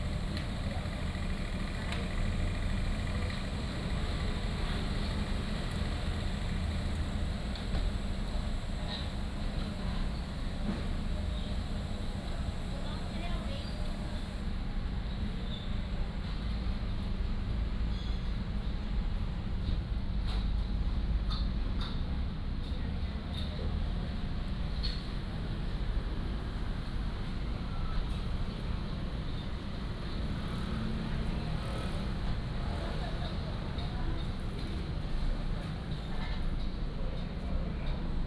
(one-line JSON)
{"title": "西文祖師廟, Magong City - In the temple square", "date": "2014-10-21 19:50:00", "description": "In the temple square", "latitude": "23.57", "longitude": "119.58", "altitude": "9", "timezone": "Asia/Taipei"}